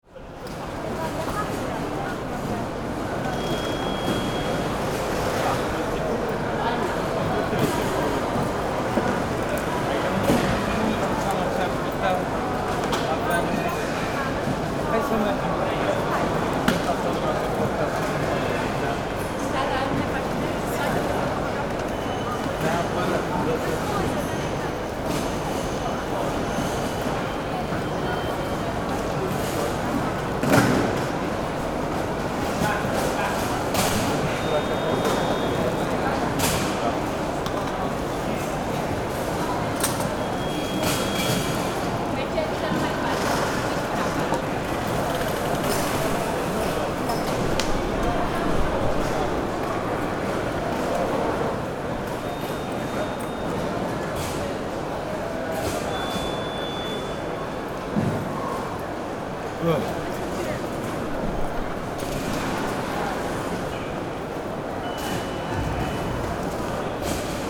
catania airport - safety check
catania airport, safety checks at the gate